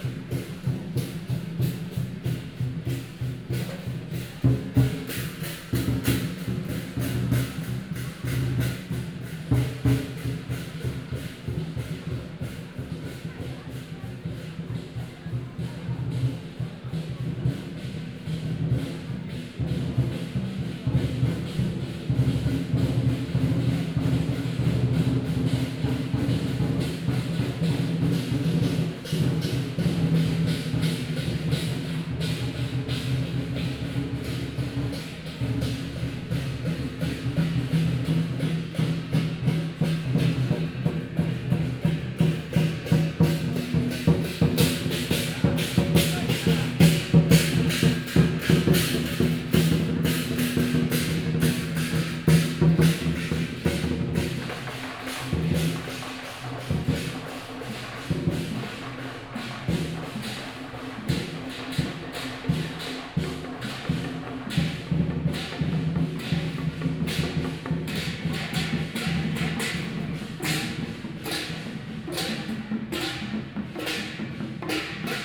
Zhongzheng District, Taipei - temple festivals
Traditional temple festivals, Through a variety of traditional performing teams, Binaural recordings, Zoom H6+ Soundman OKM II
Zhongzheng District, Taipei City, Taiwan, 16 November 2013